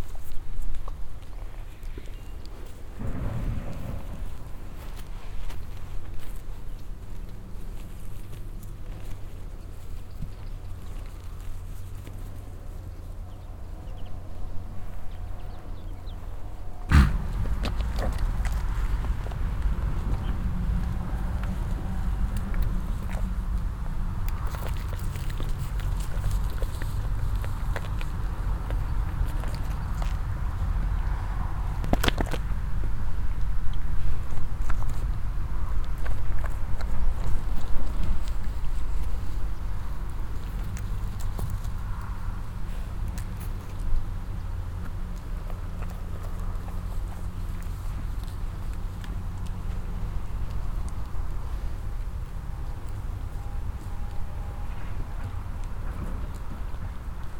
roder, goats in a corral
A group of goats on a meadow in a corral nearby the road.The sounds of them bleating and eating grass. In the distance the sound of passing by traffic.
Roder, Ziegen in einem Korral
Eine Gruppe von Zigen auf einer Wiese in einem Gehege an der Straße. Die Geräusche ihres Meckerns und Grasessens. In der Ferne das Geräusch von vorbeifahrendem Verkehr.
Roder, chèvres dans un enclos
Un groupe de chèvres dans un enclos sur un champ à proximité de la route. Le bruit qu’elles font en bêlant et en broutant l’herbe. Dans le lointain, on entend passer le trafic routier.